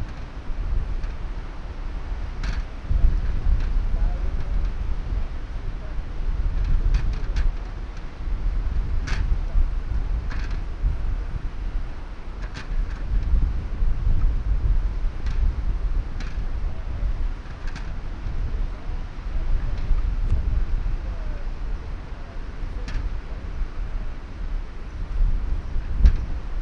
audresseles, strassenlaterne im wind
mittags, strassenlaterne im konstanten starkwind
fieldrecordings international:
social ambiences, topographic fieldrecordings